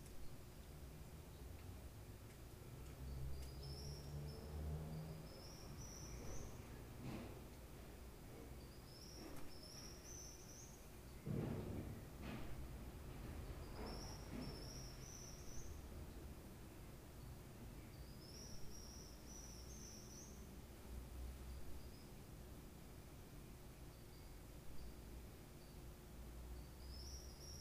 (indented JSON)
{
  "title": "Ibagué, Tolima, Colombia - Bat?",
  "date": "2013-09-03 04:11:00",
  "description": "bat y a room",
  "latitude": "4.43",
  "longitude": "-75.22",
  "altitude": "1162",
  "timezone": "America/Bogota"
}